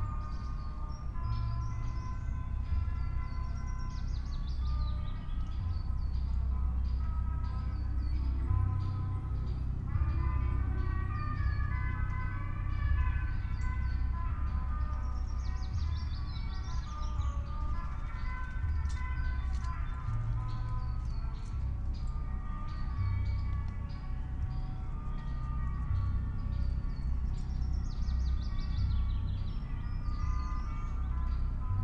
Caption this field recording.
19:16 Berlin, Königsheide, Teich - pond ambience